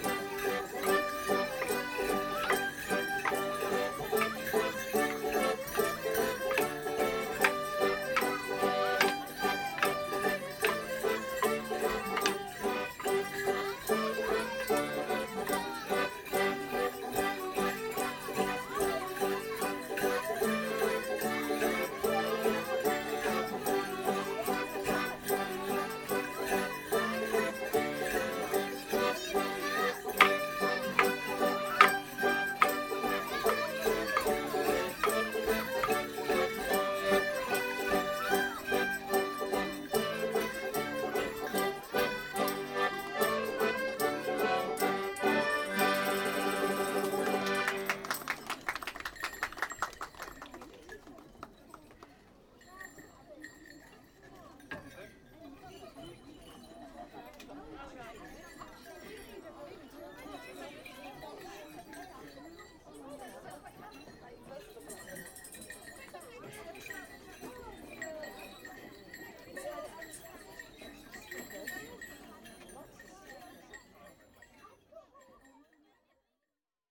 Friends of Reading University Heritage Trail, Reading University Campus, Reading, UK - Shinfield Shambles Morris Side
Shinfield Shambles are a local morris side who dance in the Welsh Border style. They are based in the village of Shinfield. Each dancer wears an outfit themed around a single shade, with a rag jacket covered in small pieces of fabric; a hat covered in flowers of the same hue; and a coloured skirt and shoelaces to match. Bells are wound into everyone’s shoelaces and the musicians are dressed in all shades of the rainbow. The Shinfield Shambles were performing last Sunday at an event at the Museum of Reading and I enjoyed the inclusive style of their dancing which featured several numbers in which everyone was invited to participate. Perhaps even slightly more than the sounds of the actual performances, I liked that wherever the morris side walked, they left a trail of bells with their feet. One member of the side commented that after a while you get to know who is who from the distinctive sounds of their bells; I don’t doubt it.
May 2017